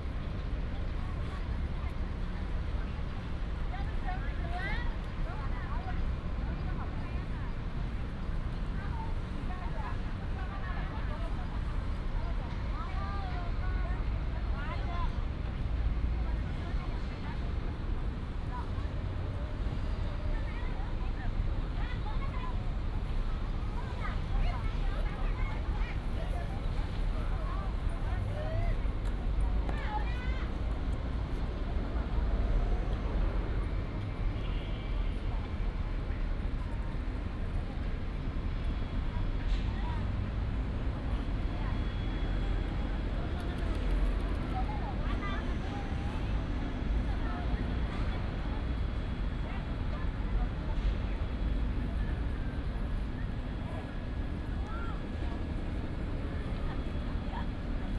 桃園藝文廣場, Taoyuan Dist., Taoyuan City - In the square
Traffic sound, In the square, Construction sound
Taoyuan City, Taiwan